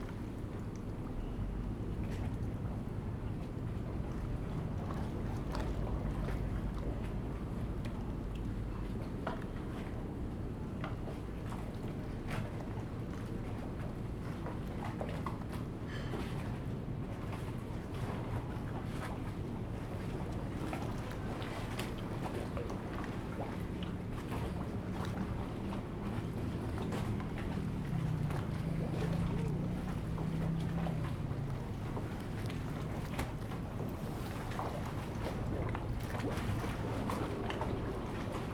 新港漁港, Chenggong Township - The quayside
The quayside, Very hot weather
Zoom H2n MS+ XY
Chenggong Township, Taitung County, Taiwan, 6 September